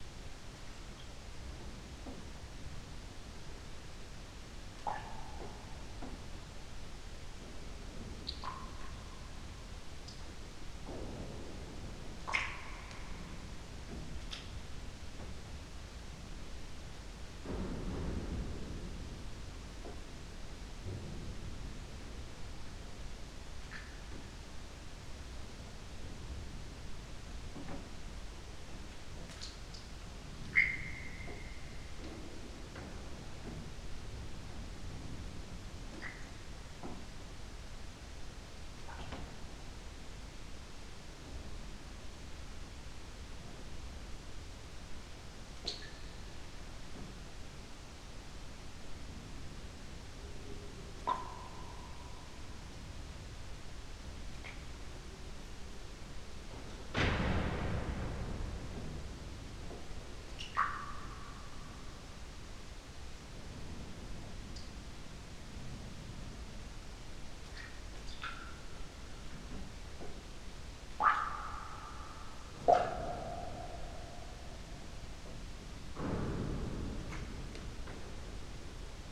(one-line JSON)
{"title": "SBG, Puigneró, antigua fábrica - Noche, silencio", "date": "2011-08-10 01:00:00", "description": "Silencio de madrugada en una de las grandes naves de la antigua fábrica Puigneró. Unas minúsculas gotas de agua y los crujidos del propio edificio reverberan en todo el espacio, ahora vacío y casi en ruinas.", "latitude": "41.98", "longitude": "2.18", "altitude": "882", "timezone": "Europe/Madrid"}